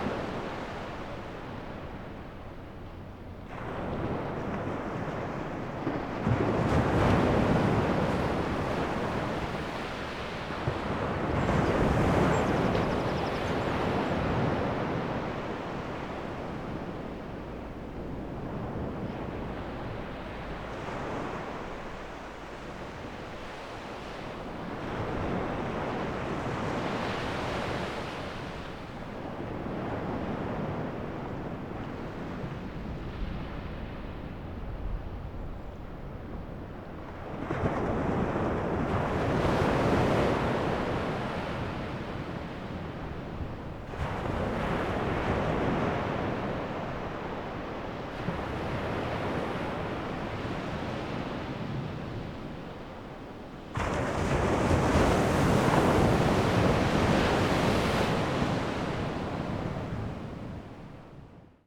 A little bit further away from the Ocean.
Northern Ireland, United Kingdom